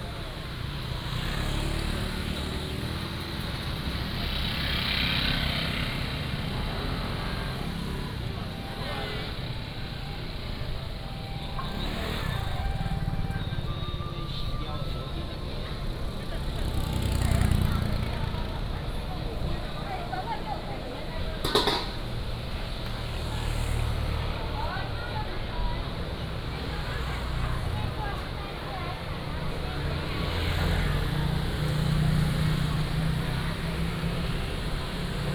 Minsheng Rd., Hsiao Liouciou Island - Walking in the street
Walking in the street